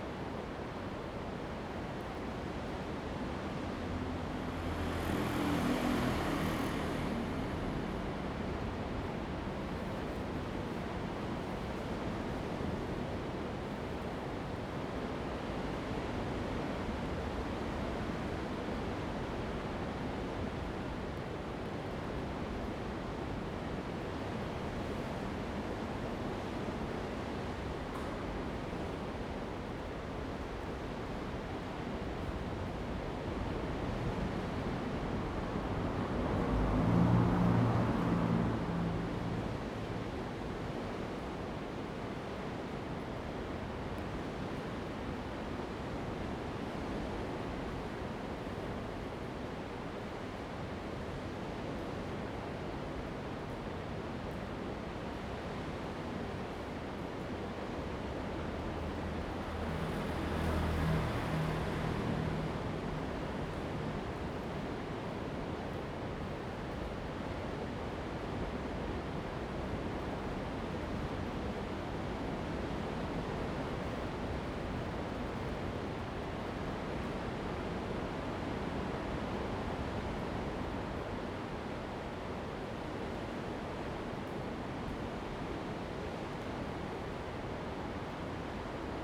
In the cave, Sound of the waves, Aboriginal gathering place
Zoom H2n MS+XY
Taitung County, Taiwan, 29 October 2014